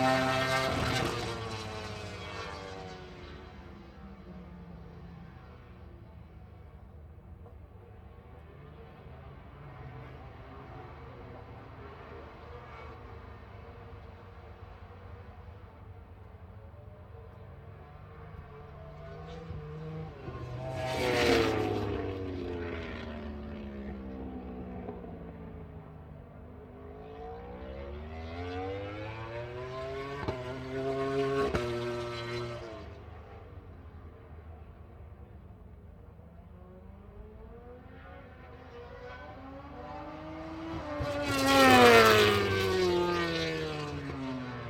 Unnamed Road, Derby, UK - british motorcycle grand prix 2006 ... motogp free practice 1
british motorcycle grand prix 2006 ... free practice 1 ... one point stereo mic to minidisk ...